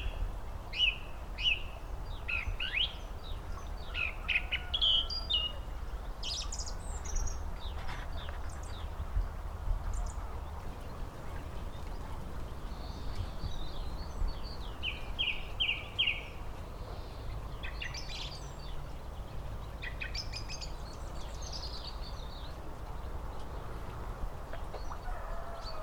Barr Lane, Chickerell
spring, bird singing, distant horse and other animals.
27 February 2011, 12:41